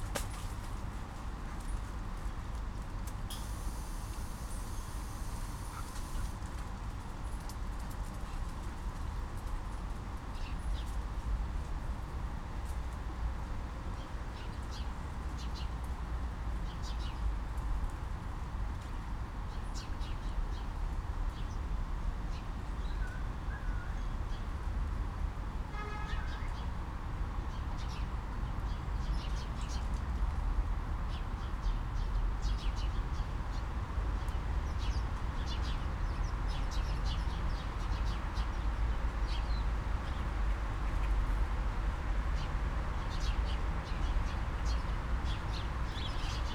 {
  "title": "Palacio de Cristal / Invernadero Arganzuela, outside",
  "date": "2010-11-21 15:28:00",
  "description": "Recorded at the entrance of this huge greenhouse / botanic garden. A scene involving a big tree, a bunch of interactive birds, some automatic mechanisms from the building, a naughty dog, a static phonographist and the city...",
  "latitude": "40.39",
  "longitude": "-3.70",
  "altitude": "581",
  "timezone": "Europe/Madrid"
}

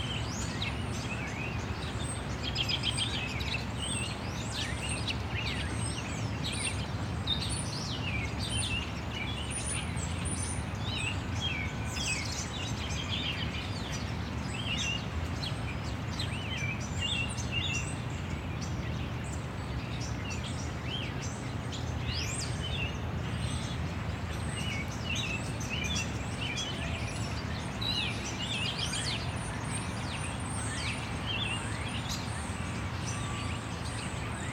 {
  "title": "Grandview Ave, Ridgewood, NY, USA - Birds and a Grey squirrel alarm call",
  "date": "2022-03-17 14:45:00",
  "description": "Sounds of various birds and the alarm call of a grey squirrel (after 6:14).\nThe Grey squirrel was possibly reacting to the presence of a nearby dog.",
  "latitude": "40.71",
  "longitude": "-73.91",
  "altitude": "32",
  "timezone": "America/New_York"
}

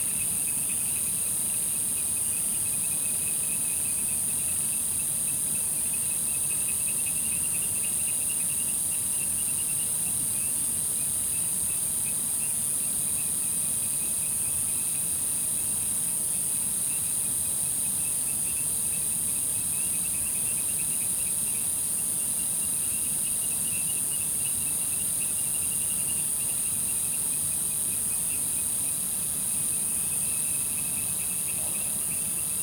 {
  "title": "茅埔坑, 埔里鎮桃米里 - Small village night",
  "date": "2015-08-10 20:15:00",
  "description": "Frogs chirping, Insects sounds, Small village night\nZoom H2n MS+ XY",
  "latitude": "23.94",
  "longitude": "120.94",
  "altitude": "470",
  "timezone": "Asia/Taipei"
}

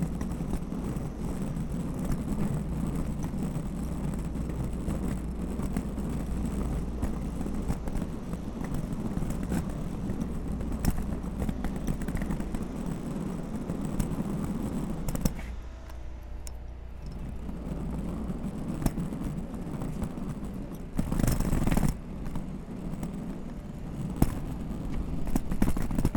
{
  "title": "Pearl St, Boulder, CO, USA - USA Luggage Bag Drag #12",
  "date": "2019-10-04 17:38:00",
  "description": "Recorded as part of the 'Put The Needle On The Record' project by Laurence Colbert in 2019.",
  "latitude": "40.02",
  "longitude": "-105.28",
  "altitude": "1638",
  "timezone": "America/Denver"
}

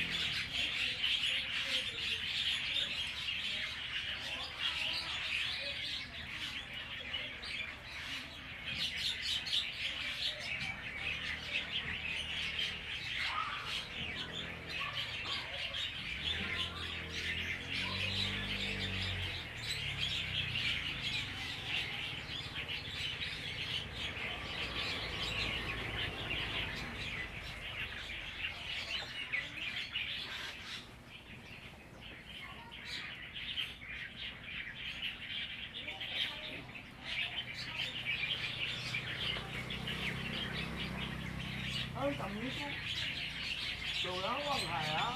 {
  "title": "望海亭, Hsiao Liouciou Island - Birds singing",
  "date": "2014-11-01 11:04:00",
  "description": "Birds singing, Tourists\nZoom H2n MS +XY",
  "latitude": "22.35",
  "longitude": "120.37",
  "altitude": "28",
  "timezone": "Asia/Taipei"
}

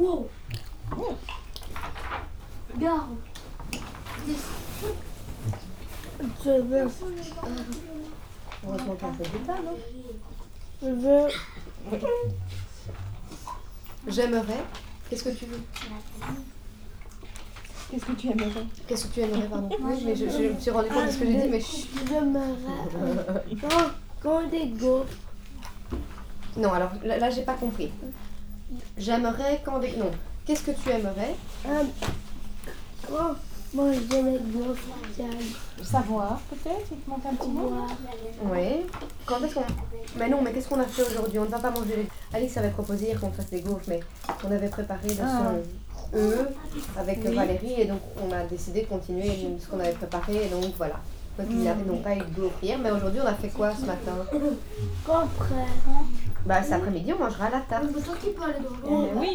{
  "title": "Quartier des Bruyères, Ottignies-Louvain-la-Neuve, Belgique - Escalpade school",
  "date": "2016-03-24 12:40:00",
  "description": "Escalpade school is a place intended for children who have intellectual disability, learning disability and physical deficiency. This school do Bobath NDT re-education (Neuro Developpemental Treatment).\nThis is the lunch time. Children make sometimes hard to listen noises. It's an important testimony, as it really shines in this moment that professors have extreme kindness towards children.",
  "latitude": "50.66",
  "longitude": "4.61",
  "altitude": "111",
  "timezone": "Europe/Brussels"
}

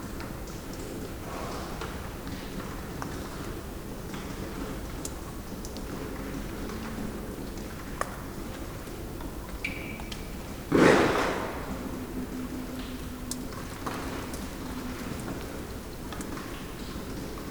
{
  "title": "berlin, friedelstraße: hinterhof - the city, the country & me: backyard",
  "date": "2013-01-28 01:47:00",
  "description": "melt water dripping from the roof\nthe city, the country & me: january 28, 2013",
  "latitude": "52.49",
  "longitude": "13.43",
  "altitude": "46",
  "timezone": "Europe/Berlin"
}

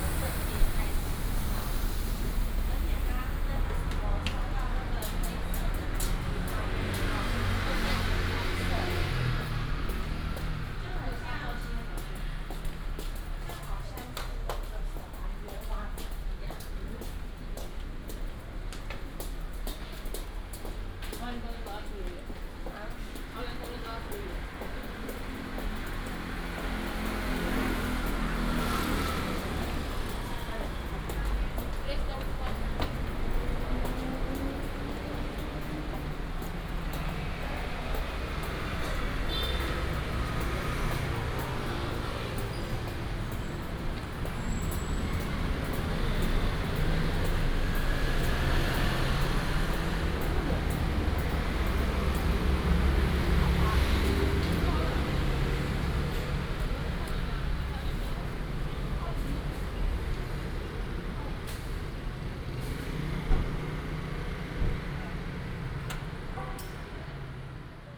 {
  "title": "Bo’ai St., Zhunan Township, Miaoli County - walking in the Street",
  "date": "2017-01-18 08:30:00",
  "description": "walking in the Street, Traffic Sound, Footsteps, Breakfast shop",
  "latitude": "24.69",
  "longitude": "120.88",
  "altitude": "13",
  "timezone": "GMT+1"
}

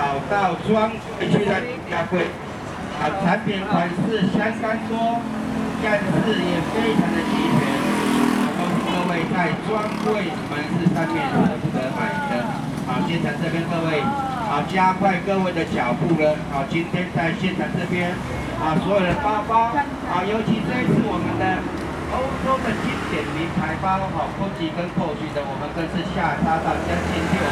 {
  "title": "Zhongxing St., Yonghe Dist., New Taipei City - Trafficking package",
  "date": "2012-02-10 17:12:00",
  "description": "Trafficking package, Sony ECM-MS907, Sony Hi-MD MZ-RH1",
  "latitude": "25.01",
  "longitude": "121.52",
  "altitude": "18",
  "timezone": "Asia/Taipei"
}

